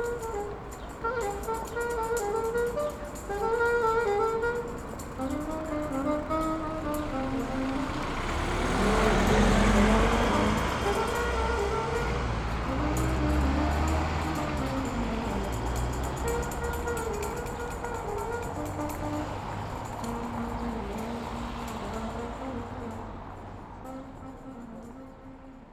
Berlin: Vermessungspunkt Maybachufer / Bürknerstraße - Klangvermessung Kreuzkölln ::: 18.07.2011 ::: 18:23
Berlin, Germany, 18 July 2011